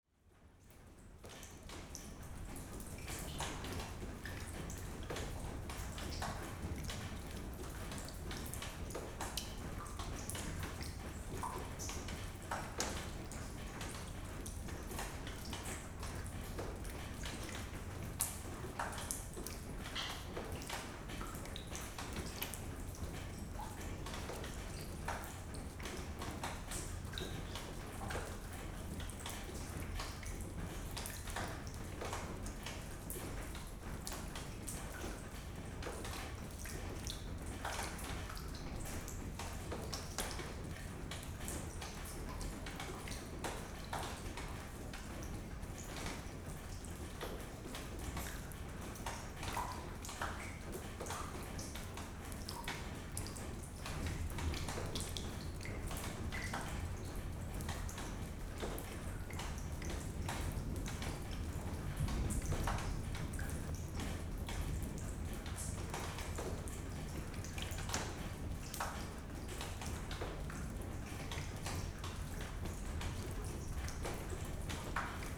Utena, Lithuania, in the abandoned cinema
abandoned building of cinema in the centre of Utena. raining. thunderstorm. a leaking ceiling.
5 July 2012, 11:40